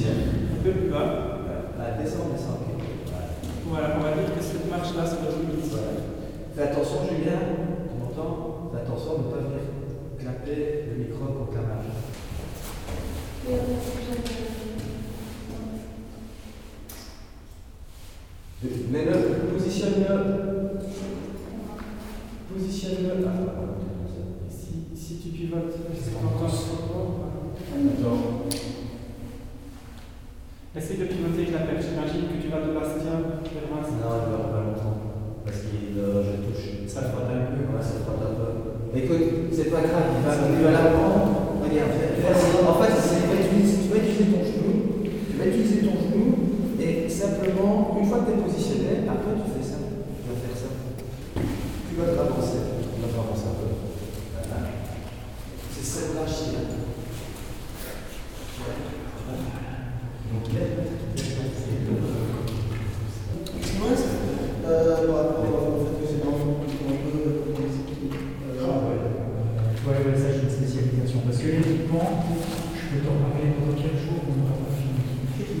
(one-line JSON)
{"title": "Namur, Belgium - Emines bunker", "date": "2017-11-19 14:50:00", "description": "Some students are making a short film, in the called Émines bunker. There's a lot of underground bunkers near the Namur city. Students are talking about their project in a wide room, with a lot of reverb. Bunker is abandoned since the WW2.", "latitude": "50.51", "longitude": "4.85", "altitude": "187", "timezone": "Europe/Brussels"}